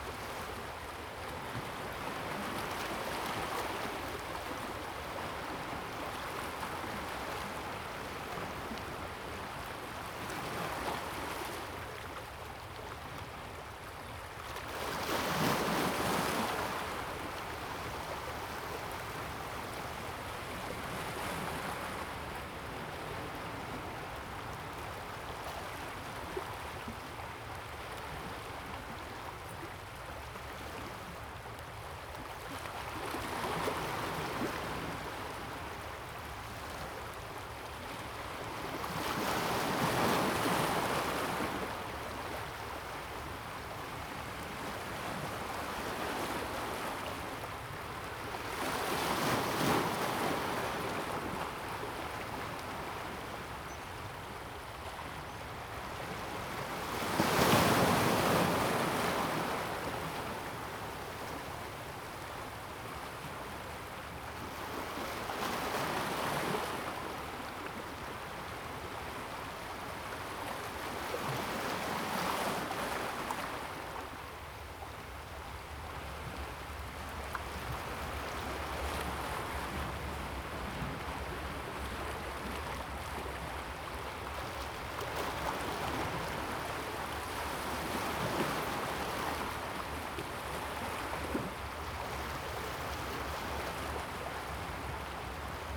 大屯溪, New Taipei City, Taiwan - In the river and the waves interchange
Sound of the waves, Stream, In the river and the waves interchange
Zoom H2n MS+XY